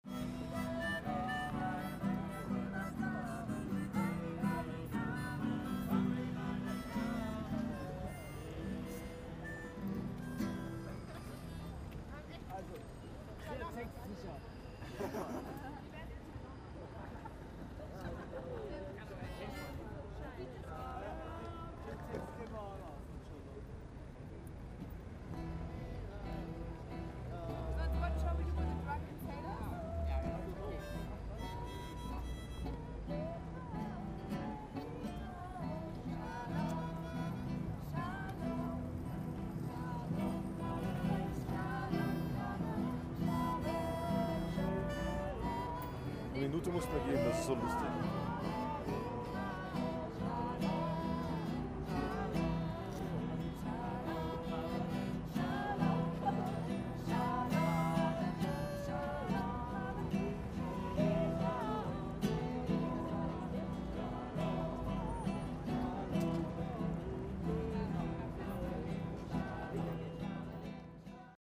young christians singing in front of berlin main station
young people, obviously christians, sitting in front of berlin main station.
recorded nov 16th, 2008.
Berlin, Germany, 29 April 2009